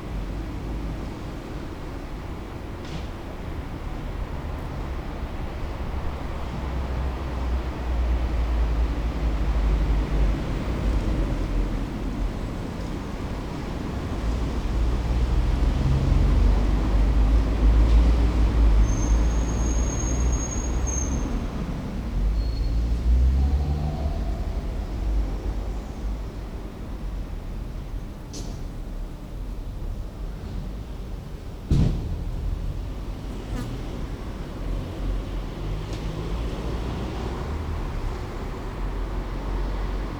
berlin wall of sound-bouche-harzerstr. ex-deathstrip. j.dickens 020909
Berlin, Germany